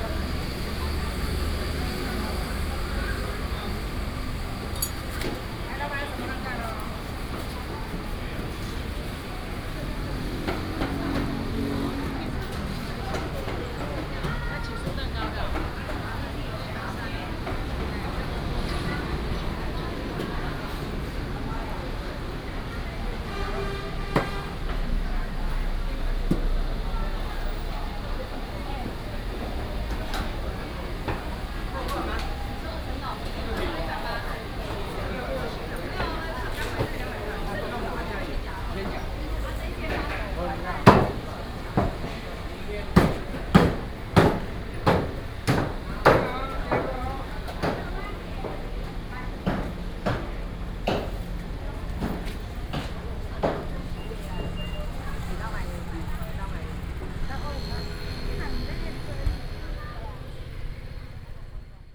平鎮黃昏市場, Pingzhen Dist. - Traditional market
Traditional market, Traffic sound
Pingzhen District, Taoyuan City, Taiwan, 4 August 2017, 16:31